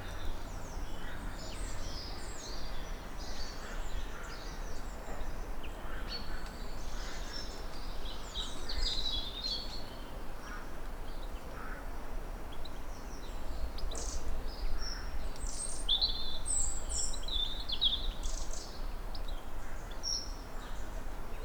{"title": "Plymouth, UK - Dunnock, robin, goldfinch", "date": "2014-01-25 09:49:00", "latitude": "50.40", "longitude": "-4.20", "altitude": "14", "timezone": "Europe/London"}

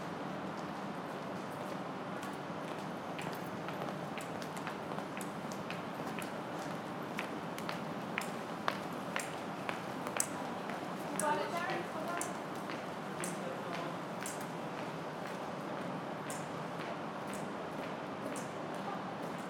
{"title": "Post Office near Market Street. - Alleyway Pulse", "date": "2010-09-16 18:05:00", "description": "Recorded on an Alleyway jsut next to a Post Office in Manchester Town Centre.", "latitude": "53.48", "longitude": "-2.24", "altitude": "56", "timezone": "Europe/London"}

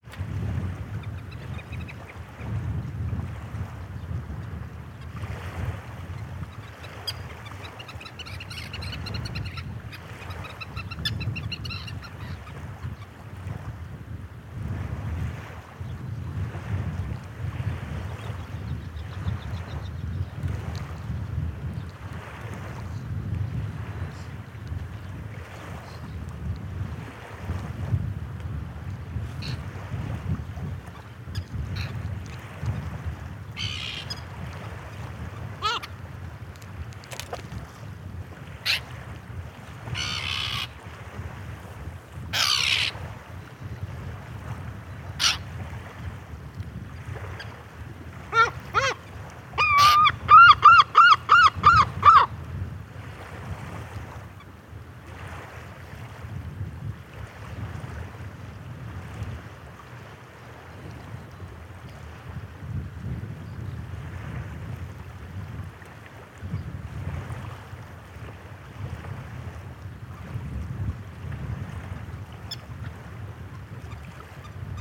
{"title": "Toft ferry terminal, Shetland Islands, UK - Listening to the seabirds while waiting for the ferry to Yell", "date": "2013-08-01 18:55:00", "description": "I was waiting for the ferry to Yell at the Toft terminal, and as I sat in my car, I realised how amazing the seabirds sounded all around me, so I stuffed my Naiant X-X microphones out of the windows of the car and sat inside listening through these microphones and the FOSTEX FR-2LE to the birdsong around me. It was a sunny day, not bad weather at all, but as you can hear there was something of a breeze.", "latitude": "60.47", "longitude": "-1.21", "timezone": "Europe/London"}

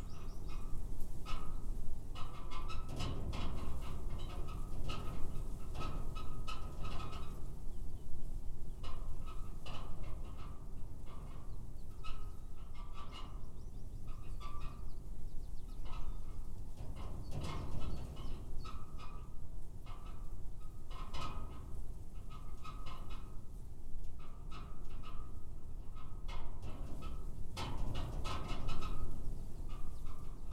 {"title": "Field off Barric Lane, Eye, Suffolk, UK - rusty, abandoned sugarbeet harvester", "date": "2022-04-21 14:00:00", "description": "rusty, abandoned sugarbeet harvester, warm sunny day in April with winds gusting across field of Spring wheat with wren.", "latitude": "52.29", "longitude": "1.16", "altitude": "52", "timezone": "Europe/London"}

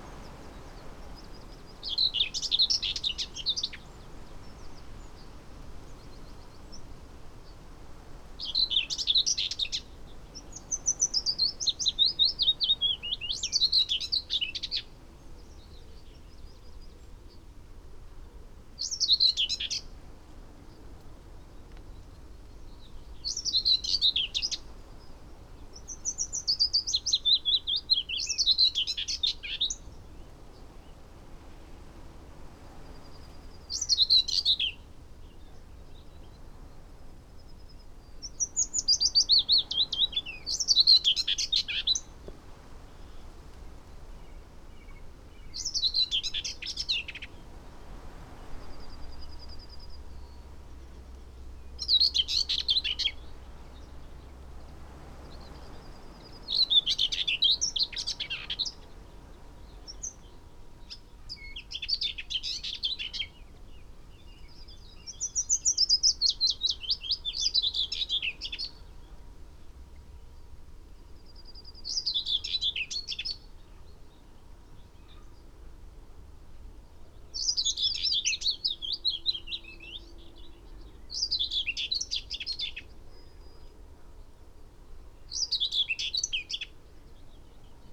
{"title": "Green Ln, Malton, UK - whitethroat song soundscape ... wld 2019 ...", "date": "2019-07-17 06:18:00", "description": "Whitethroat song soundscape ... SASS on tripod ... bird song ... call ... from ... willow warbler ... song thrush ... carrion crow ... wren ... yellowhammer ... wood pigeon ... background noise ...", "latitude": "54.13", "longitude": "-0.55", "altitude": "83", "timezone": "Europe/London"}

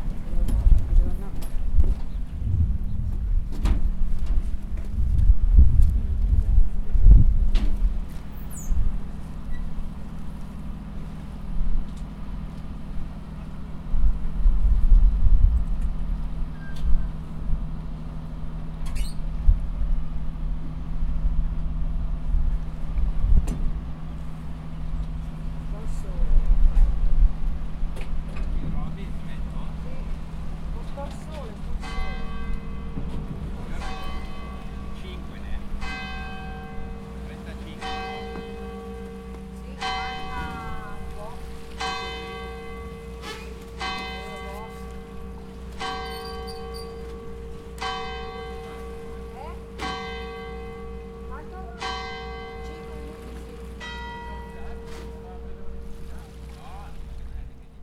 {"title": "Schiff, Luino - Cannobio", "date": "2010-12-20 11:10:00", "description": "Schiff, Schiffsverbindung, Luino Cannobio, Norditalien, Lago Maggiore, Wintersaison", "latitude": "46.06", "longitude": "8.70", "timezone": "Europe/Rome"}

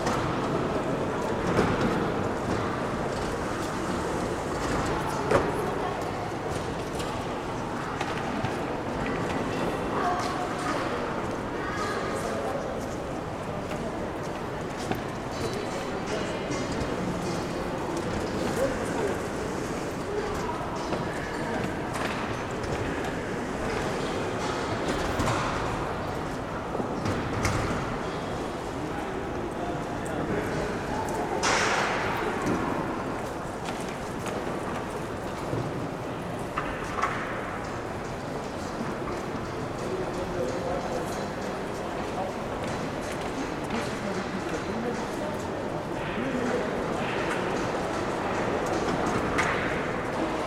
{"title": "essen - city center essen", "date": "2009-10-20 20:00:00", "description": "city center essen", "latitude": "51.46", "longitude": "7.01", "altitude": "77", "timezone": "Europe/Berlin"}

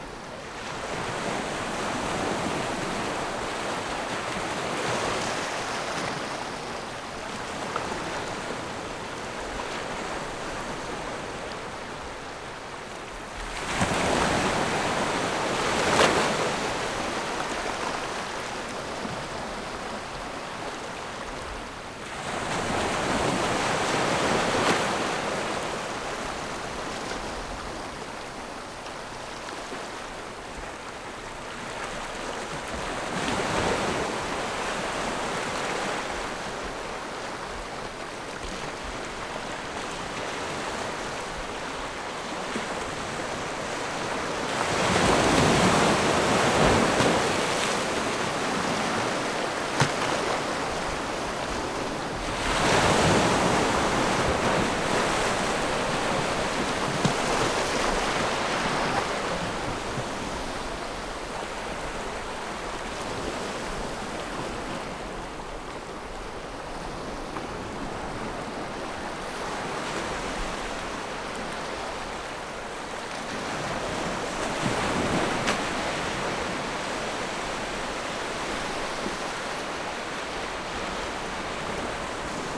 {"title": "Dabaisha Diving Area, Lüdao Township - Diving Area", "date": "2014-10-30 14:56:00", "description": "Diving Area, sound of the waves\nZoom H6 +Rode NT4", "latitude": "22.64", "longitude": "121.49", "altitude": "3", "timezone": "Asia/Taipei"}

